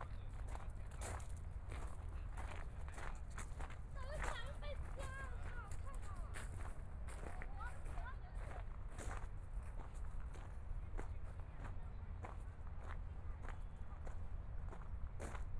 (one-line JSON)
{"title": "Xinsheng Park - Taipei EXPO Park - Walk", "date": "2014-02-16 20:47:00", "description": "迷宮花園, Traffic Sound, Binaural recordings, Zoom H4n+ Soundman OKM II", "latitude": "25.07", "longitude": "121.53", "timezone": "Asia/Taipei"}